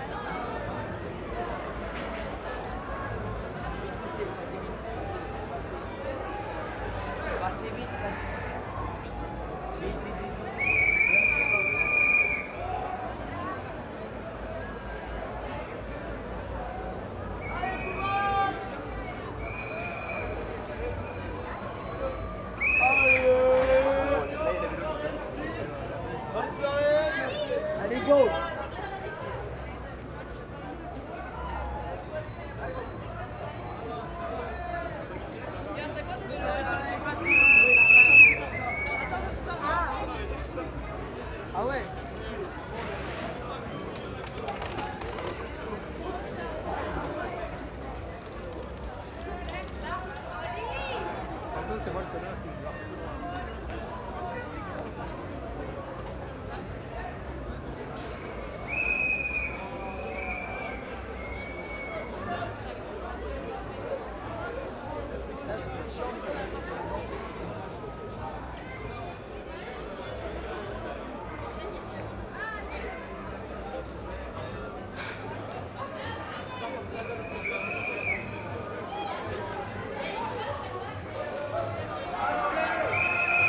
{"title": "Ottignies-Louvain-la-Neuve, Belgium - 24 heures vélos - 24 hours Bicycles", "date": "2017-10-25 20:50:00", "description": "24 heures vélos means, in french, 24 hours bicycles. Students are doing a big race, with traditional VTT running fast, folk and completely crazy bikes, running slow and bad and drunk, all running during 24 hours. Every whistle notice a bike incoming. Also, its a gigantic carousal. Every student is drunk. On evening it's happy people, shouting, pissing everywhere and vomiting also everywhere. Later on the night, more and more alcohol, it will be another story... But also this feast, it's bleusailles. It's a patois word meaning ... perhaps trial by fire, its hard to translate as it's a quite special belgian folk, with clothes and rules. 9:30 mn, it's baptized students walking, coming from Hermes school, shouting and ... singing ? I think they are completely drunk ! Recording while walking in the center of the city. It's all night shouting like this !", "latitude": "50.67", "longitude": "4.61", "altitude": "113", "timezone": "Europe/Brussels"}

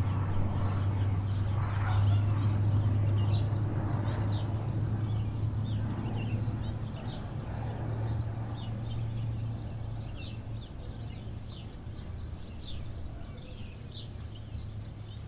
{"title": "CILAOS centre - 20181101 0827-CILAOS ambiance sonore du au tourisme", "date": "2018-11-01 08:27:00", "description": "Le problème c'est que c'est intermittent durant 5 à 7h de temps tout de même, plutôt que regroupé durant une tranche horaire limitée: attendre que ça passe signifie renoncer à la matinée entière et le calme durable ne revient jamais vraiment avant qu'il fasse couvert.\nCette ambiance sonore provoque un cumul de dégâts sur la nature et la société:\n1: ça empêche les oiseaux endémiques de communiquer et défendre convenablement leur territoire en forêt face à une concurrence, en particulier avec le merle-maurice mieux adapté qu'eux au bruit: cet avantage ainsi donné au merle-maurice aide encore un peu plus les plantes envahissantes qui mettent en danger la forêt primaire.\n2: ça induit un tourisme agressif et saccageur qui se ressent au sentier botanique. En présence d'un tel vacarme personne n'a idée de calmer des enfants qui crient ou d'écouter les oiseaux: le matin les familles avec enfants font beaucoup plus de dégâts car la nature n'est qu'un défouloir et rien d'autre.", "latitude": "-21.14", "longitude": "55.47", "altitude": "1186", "timezone": "Indian/Reunion"}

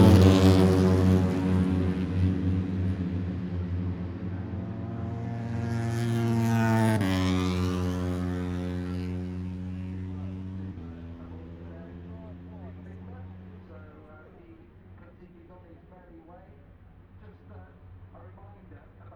{"title": "Silverstone Circuit, Towcester, UK - british motorcycle grand prix 2021 ... moto three ...", "date": "2021-08-28 09:00:00", "description": "moto three free practice three ... copse corner ... olympus ls 14 integral mics ...", "latitude": "52.08", "longitude": "-1.01", "altitude": "158", "timezone": "Europe/London"}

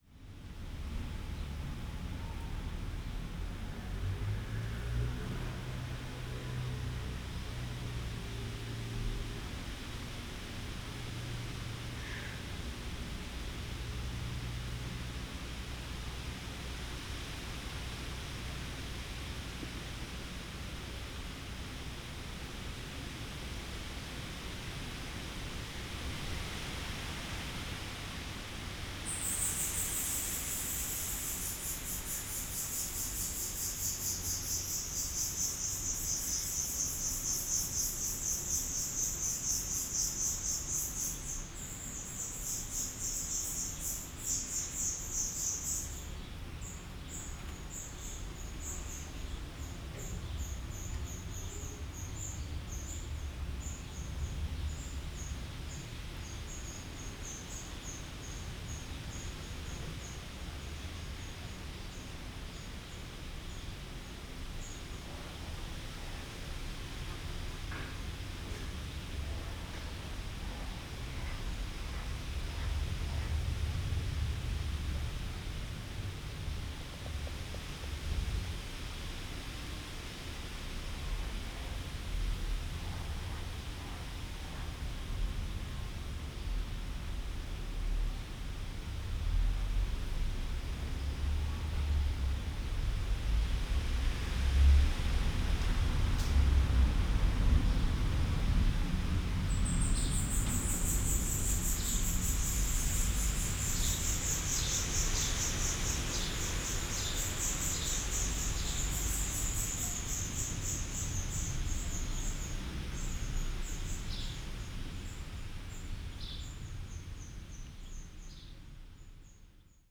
{"title": "Bredereiche, Fürstenberg/Havel, Deutschland - inside church", "date": "2016-07-02 11:05:00", "description": "the church door is open day and night for people and animals. Black redstarts (german: Hausrotschwanz) have build their nest behind the organ, now flying around to feed the chicks.\n(Sony PCM D50, Primo EM172)", "latitude": "53.14", "longitude": "13.24", "altitude": "56", "timezone": "Europe/Berlin"}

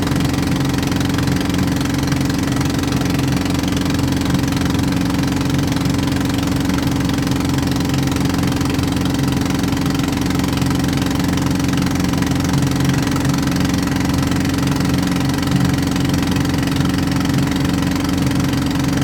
{"title": "Koh Lipe, Thailand - drone log 28/02/2013", "date": "2013-02-28 12:47:00", "description": "long tail boat engine\n(zoom h2, build in mic)", "latitude": "6.50", "longitude": "99.31", "timezone": "Asia/Bangkok"}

